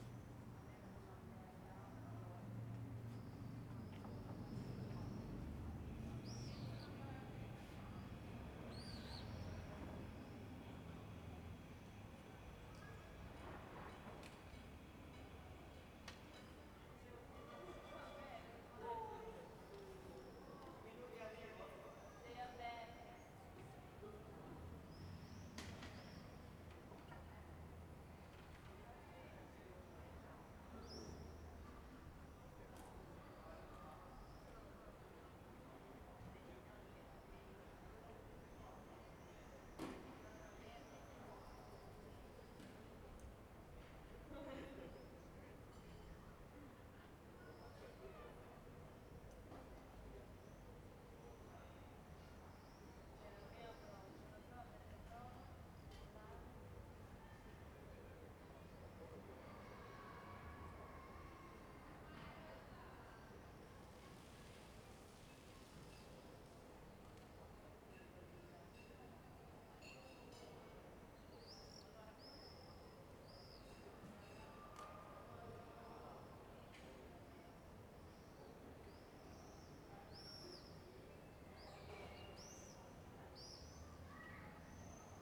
"Terrace at late sunset in the time of COVID19" Soundscape
Chapter CVII of Ascolto il tuo cuore, città. I listen to your heart, city
Sunday, June 14th 2020. Fixed position on an internal terrace at San Salvario district Turin, Turin ninety-six days after (but day forty-two of Phase II and day twenty-nine of Phase IIB and day twenty-three of Phase IIC) of emergency disposition due to the epidemic of COVID19.
Start at 9:15 p.m. end at 10:05 p.m. duration of recording 50'30'', Sunset time at 9:21 p.m.
Go to similar recording, Chapter VIII, March 14th
Piemonte, Italia, 14 June 2020